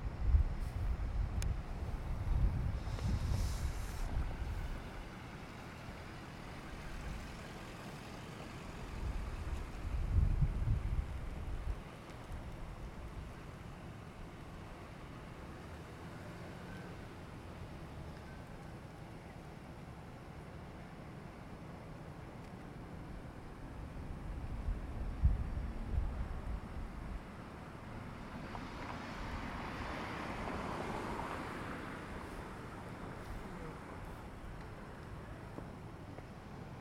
Queens Cres, Kingston, ON, Canada - Outside Leonard Hall
Please refer to the audio file for names of the location and the recordist. This soundscape recording is part of a project by members of Geography 101 at Queen’s University.